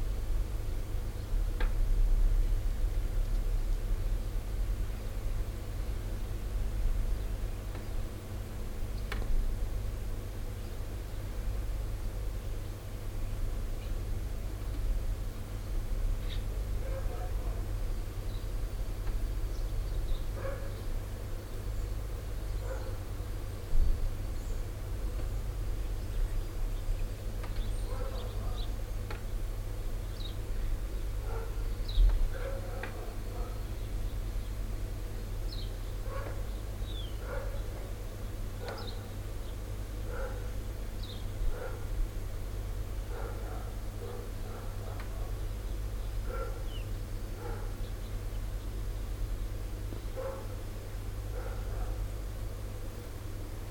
audresseles, rose des vents, gartenmorgen
morgens im garten, emsige natur, leichte winde
fieldrecordings international:
social ambiences, topographic fieldrecordings